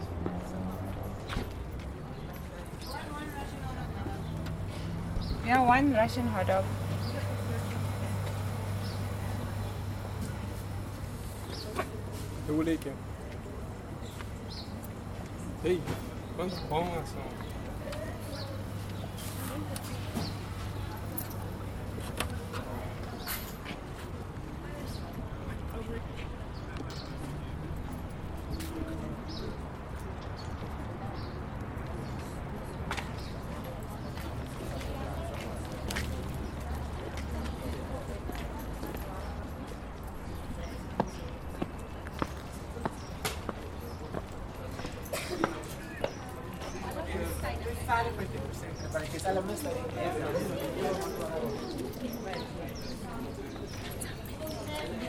Wagner St, Windhoek, Namibia - In front of the kiosk